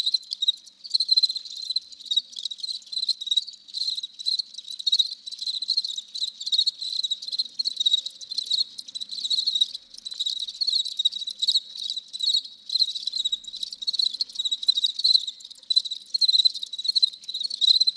Cecrea La Ligua - Hundreds of crickets (close recording)
Close recording of crickets inside a plastic box during a laboratory with children in CECREA La Ligua (Chile).
The cricket are "fulvipennis" crickets, around 300 crickets are inside the box.
Recorded during the night trough two Sanken Cos11 D microphones, on a Zoom H1 recorder.
Recorded on 10th of July 2019.